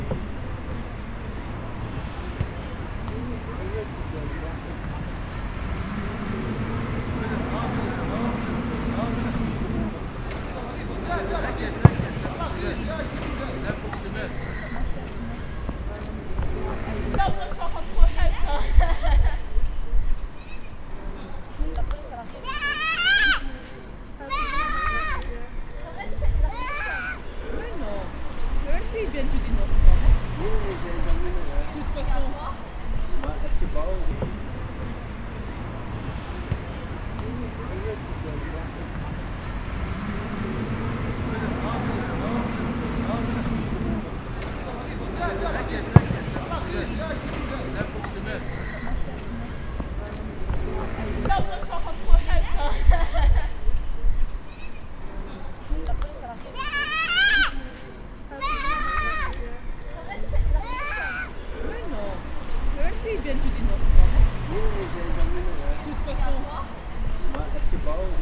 Sint-Jans-Molenbeek, Belgium - HousingSquare-BuildingSide
On this small public plaza, a young group of people was chatting on a very limited portion of its surface, grouped on one of these perimetered rectangular platforms. Their space for meeting was quite small compared to the actual space available, but because of the topographic situation the acoustic there was quite good, distanced from the road by a small mount and surrounded by trees.
Binaural, to be listened to in comparison with the other recording on the street side.
Context : project from Caroline Claus L28_Urban Sound Design studio :
Molenbeek-Saint-Jean, Belgium, 22 October 2016, 16:30